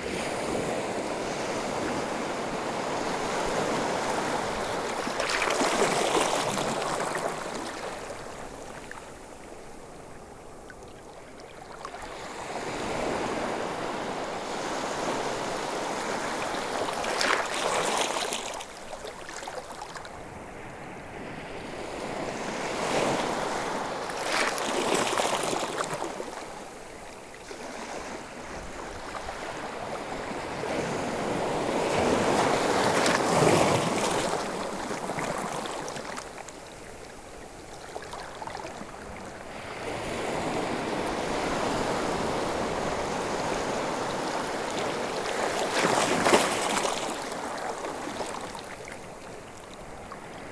La Tranche-sur-Mer, France - The sea
Recording of the sea into a rocks breakwater.